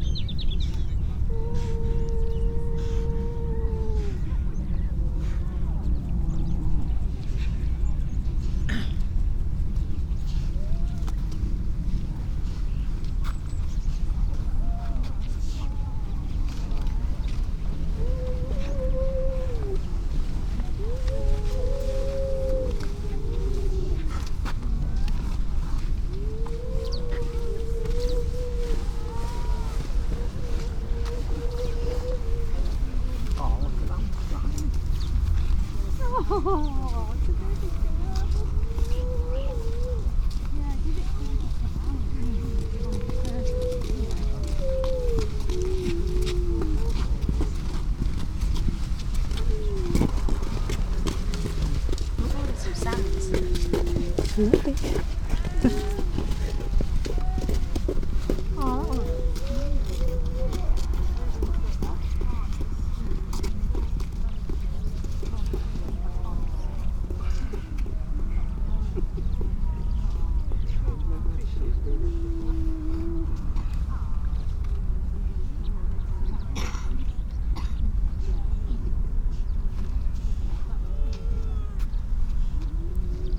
Unnamed Road, Louth, UK - grey seals ... donna nook ...
grey seals ... donna nook ... generally females and pups ... SASS ... birds calls ... skylark ... starling ... pied wagtail ... meadow pipit ... redshank ... dunnock ... curlew ... robin ... crow ... all sorts of background noise ...